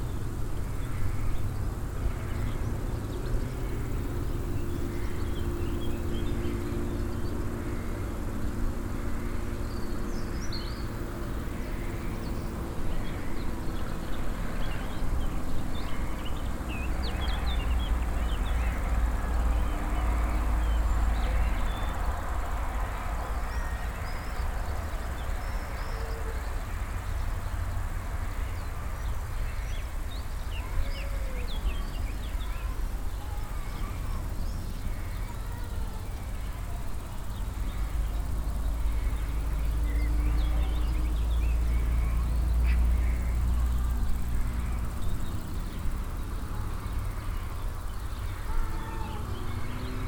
rurberg, hohenhövel, nature and traffic
in the morning time, the crickets in the nearby grass area and the constant traffic here esp. motorbikes in the distant
soundmap d - social ambiences and topographic field recordings
28 June 2010, 12:30